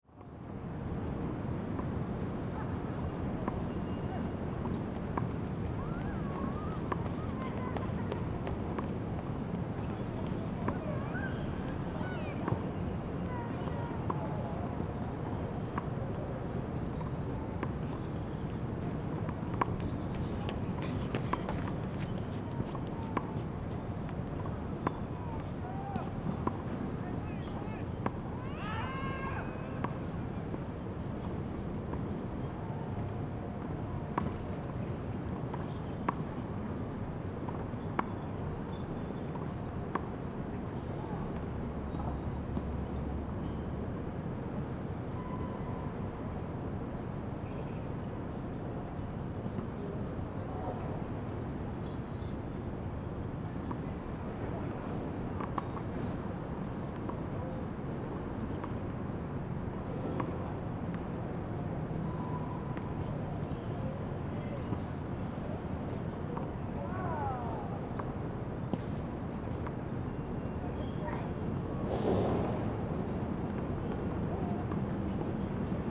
In track and field, Running sound, Sound from tennis
Zoom H2n MS+XY